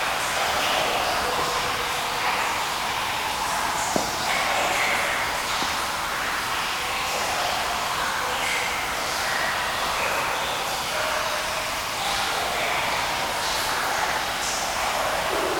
Audun-le-Tiche, France - The inclined hopper
In an undeground mine, an inclined hopper. There's 4 meters deep water and there's a deep mine ambience.